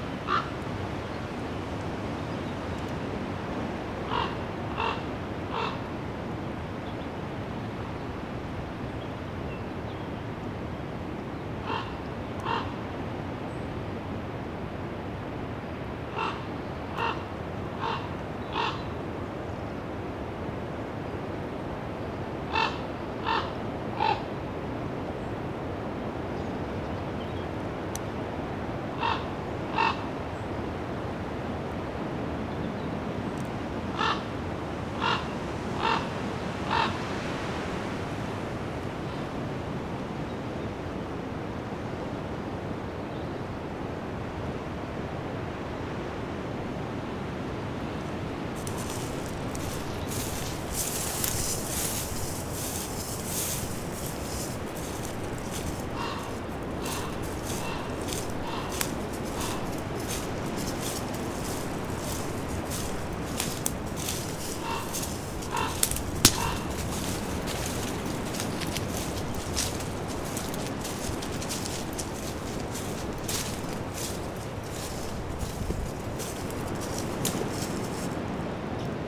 Pamphill, Dorset, UK - The spirit of King Arthur trapped in a Raven
I thought I was recording a Raven on Badbury Rings but a friend found a book recently that tells that King Arthurs Spirit was trapped in a raven there. If his spirit lives on, here it is captured in sound.